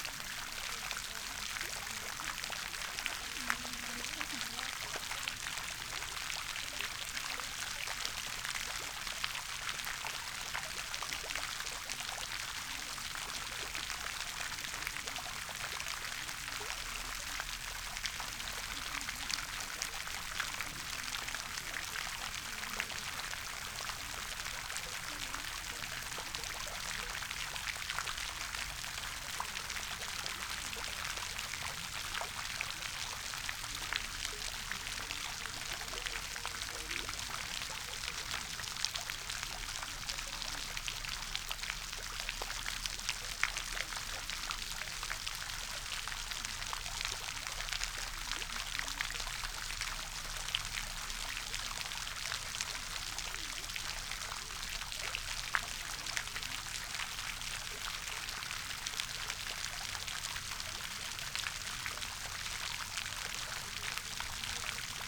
Back Ln, Malton, UK - fountainette ... again ...
fountainette again ... SASS on tripod ... movement of the plume of water by a gentle wind ...